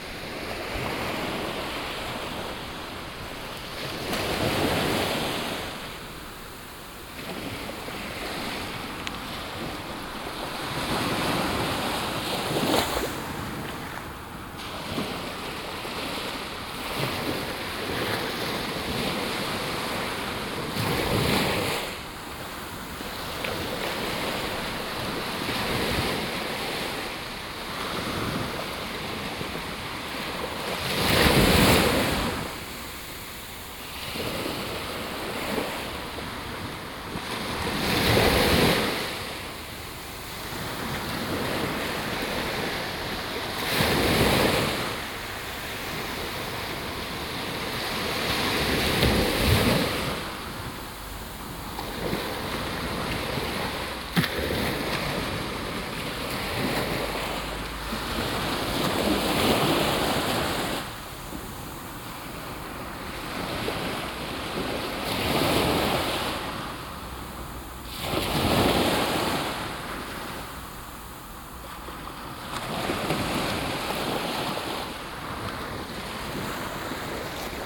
San Juan Playa, Alicante, Spain - (05) Waves at San Juan beach in Alicante
Binaural recording of waves at San Juan beach in Alicante.
recorded with Soundman OKM + Sony D100
posted by Katarzyna Trzeciak
3 November 2016, 13:29